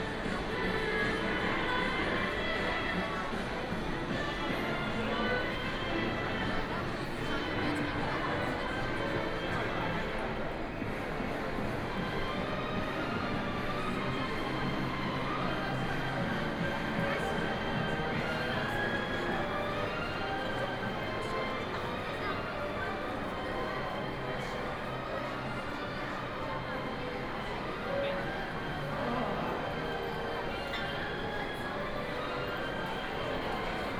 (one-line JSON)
{"title": "SEE UOMO, Changning District - the shopping mall", "date": "2013-11-23 14:50:00", "description": "From the street to go into the shopping mall, Binaural recording, Zoom H6+ Soundman OKM II", "latitude": "31.22", "longitude": "121.41", "altitude": "4", "timezone": "Asia/Shanghai"}